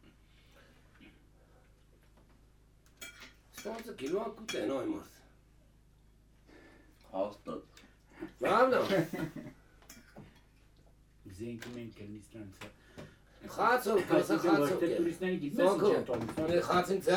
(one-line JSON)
{"title": "Kotayk, Arménie - Sharing yogurt in the yurt", "date": "2018-09-05 09:15:00", "description": "While walking near the volcanoes, some farmers went to see us and said : come into the tent during a few minutes. They are extremely poor, but welcomed us, and gave a very strong yogurt called tan, and the coffee called sourj. This recording is the time we spent in the tent. It's the simple sound of their life in mountains.", "latitude": "40.39", "longitude": "44.92", "altitude": "2699", "timezone": "Asia/Yerevan"}